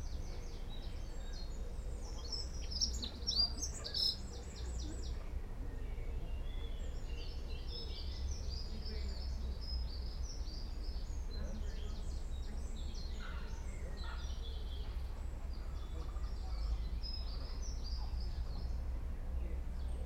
Recorded with a Roland R-07, on board mics. On a bench under the trees. Man and boy conversing. Blackbird song at start. The loud song 2/3rds of the way through is a Dunnock.